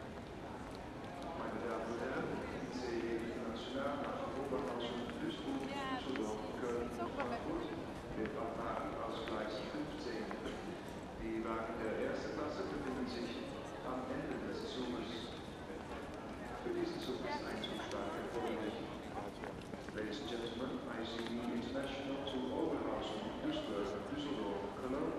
Recorded on a bench next to the escelator
7 May 2012, ~14:00